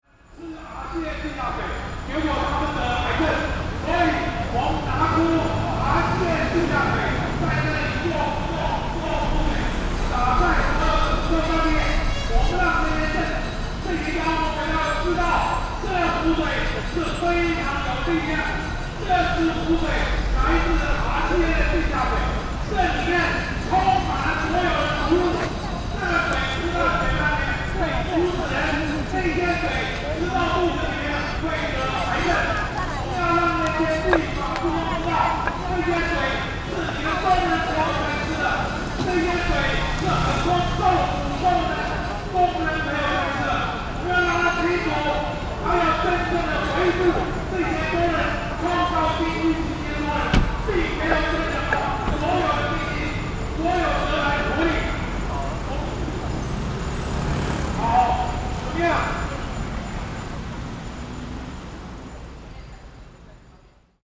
Legislative Yuan, Taipei City - labor protests
labor protests, Sony PCM D50 + Soundman OKM II